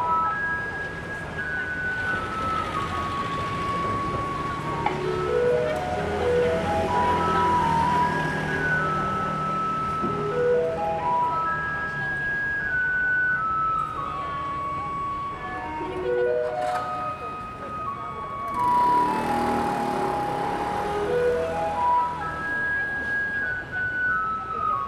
Ln., Yongheng Rd., Yonghe Dist., New Taipei City - Garbage truck coming
Garbage truck coming, Sony ECM-MS907, Sony Hi-MD MZ-RH1